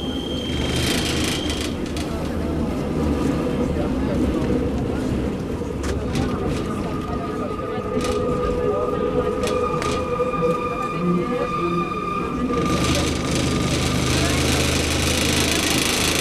Paris, metro ride
12 million people means of transport. Metro of Paris is where society is classless.